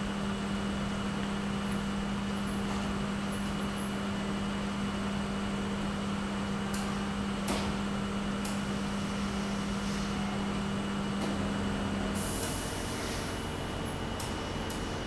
Poznan, Batorego housing estate, shopping center - laundry
employee of the laundry ironing a suit with steam iron. hum of the big commercial washing machines, dryers and ventilation system.